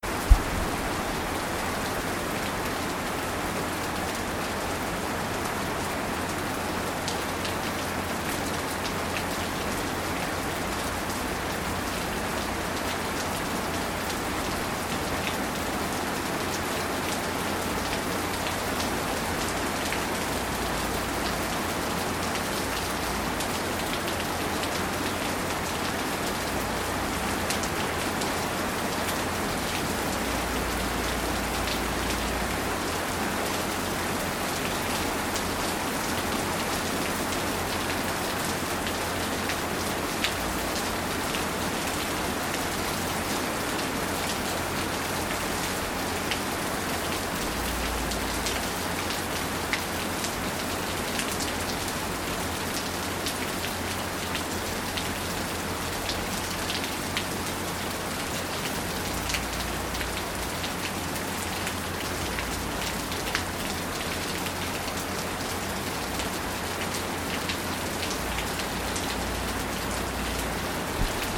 Halenfeld, Buchet, Deutschland - Regen auf das Vordach / Rain falling on the canopy
Ein starker Regen fällt aufs Vordach. Links läuft die Dachrinne über.
A heavy rain falling on the canopy. On the left the water runs over the gutter.